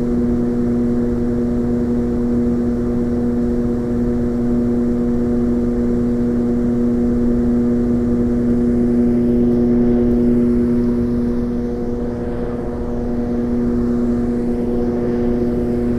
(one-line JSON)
{"title": "Estonia oil shale mine ventilation intake", "date": "2010-07-02 13:50:00", "description": "soundwalk around the building that houses the machines blowing in fresh air into the oil shale mine 70 metres under ground", "latitude": "59.21", "longitude": "27.43", "altitude": "74", "timezone": "Europe/Tallinn"}